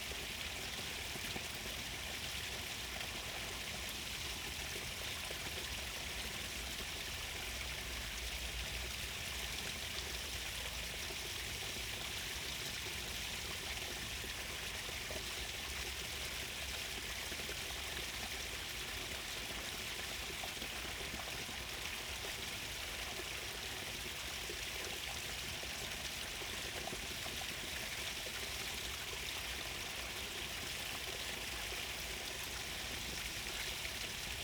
Running stream water, Psohlavců, Praha, Czechia - Running stream water, a plane and passing traffic
Praha, Česko